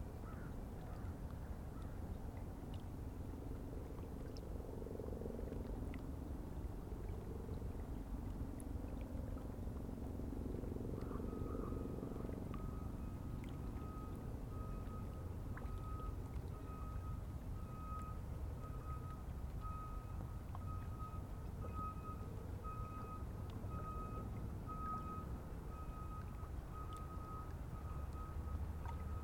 Dockside Park, West St, Cold Spring, NY, Verenigde Staten - Dockside Park Waterfront
Zoom H4n Pro
New York, United States, 14 November 2019, ~16:00